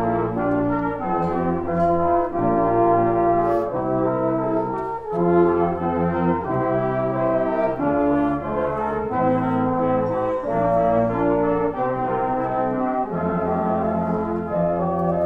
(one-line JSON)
{"title": "Speeches and Brass Band Bad Orb Part 2 - 2018 Gedenken an das Progrom 1938 Teil 2", "date": "2018-11-09 18:25:00", "description": "Brass band and speeches in front of the former synagoge to commemorate the progrom in 1938 that expelled the jews from the small town Bad Orb, this year with a reflection on the World War One. Part two.\nRecorded with DR-44WL.", "latitude": "50.23", "longitude": "9.35", "altitude": "177", "timezone": "Europe/Berlin"}